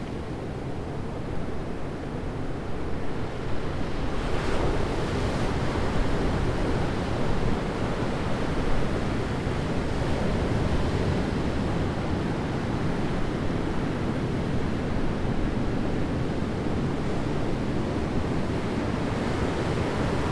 Annestown, Co. Waterford, Ireland - Annestown beach
Multiple recordings taken at various points along the beach. The Anne river enters the sea here; it can be heard at the start of the recording. Towards the end can be heard the sound of the waves sloshing beneath a concrete grille at the western end of the beach.
29 November 2015, 17:15